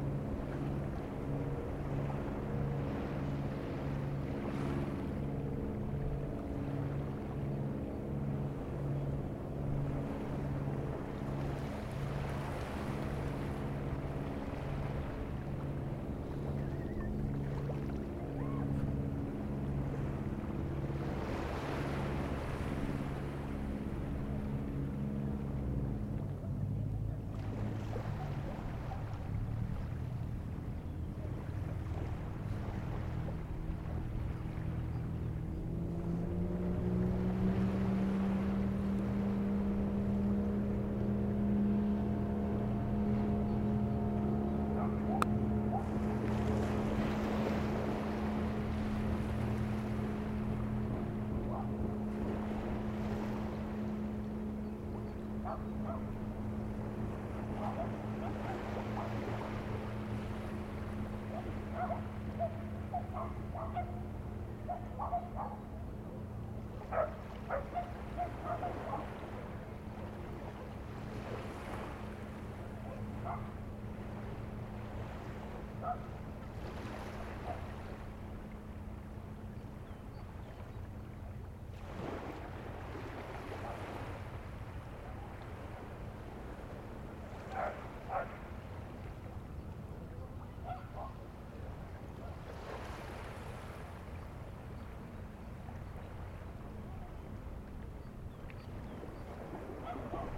Teignmouth, UK - Teignmouth Beach.
Teignmouth beach at the entrance to the Teign Estuary. Recorded with a Zoom H2N recorder and Rycote windshild. Sounds of the sea, a fishing boat and dogs barking.